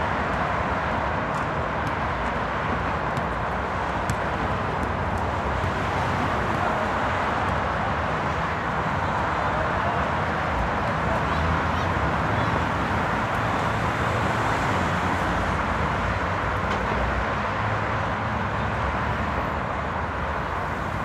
E 42nd St, New York, NY, USA - FDR Drive
Sound of traffic from Franklin D. Roosevelt East River Drive commonly known as the FDR Drive.
Also in the background sounds from the basketball park.